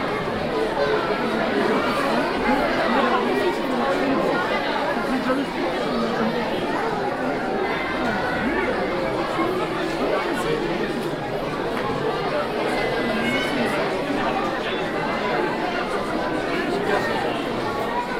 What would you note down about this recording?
France, Couternon, Municipal hall, Waiting, kindergarten show, children, crowd, Binaural, Fostex FR-2LE, MS-TFB-2 microphones